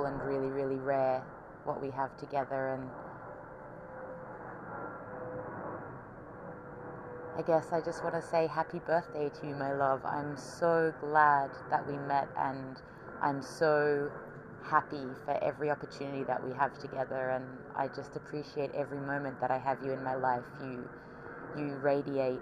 Ritournelle, London
to be continued